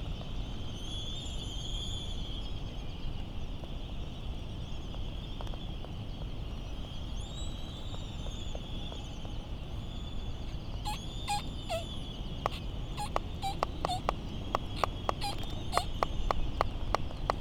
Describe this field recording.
Laysan albatross soundscape ... Sand Island ... Midway Atoll ... recorded in the lee of the Battle of Midway National Monument ... open lavalier mics either side of a furry table tennis bat used as a baffle ... laysan calls and bill rattling ... very ... very windy ... some windblast and island traffic noise ... bit of a lull in proceedings ...